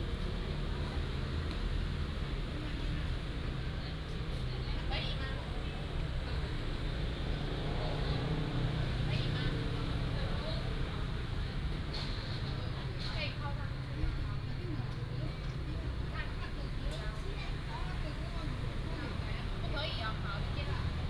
Zhongzheng Rd., Shengang Township - in the park
in the park, Traffic sound, Children's play area
Shengang Township, Changhua County, Taiwan, February 2017